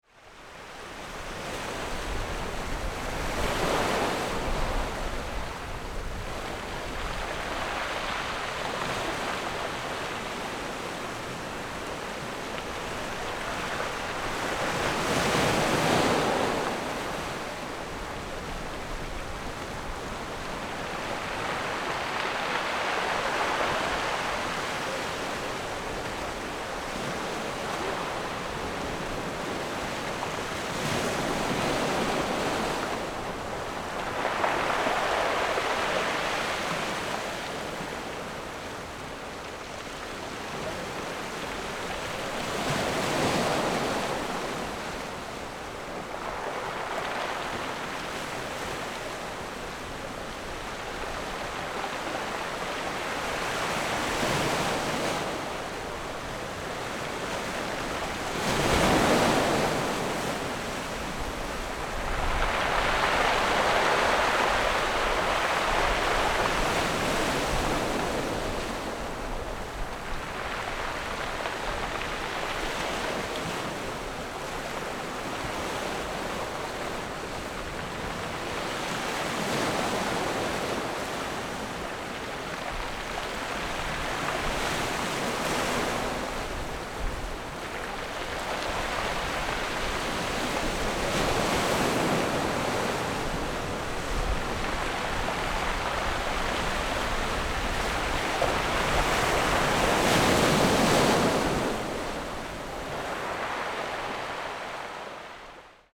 鐵堡, Nangan Township - On the rocky coast
Sound wave, On the rocky coast
Zoom H6 +Rode NT4